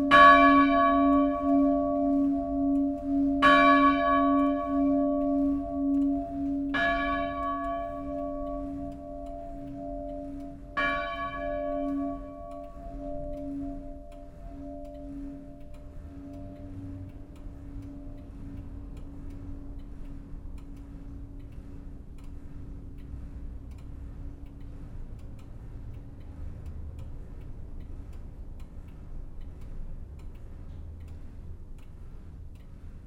vianden, trinitarier church, bells
At the bellroof - a second recording of the same church bells - this time with OKM headphone microphones.
Vianden, Trinitarier-Kirche, Glocken
Im Glockendach der Kirche. Das Klicken des elektrischen Schalters, dann die Glocken der Trinitarier-Kirche.
Vianden, église de la Sainte-Trinité, cloches
A l’intérieur du clocher de l’église. Le cliquetis du panneau de contrôle électrique puis les cloches de l’église de la Sainte-Trin
Project - Klangraum Our - topographic field recordings, sound objects and social ambiences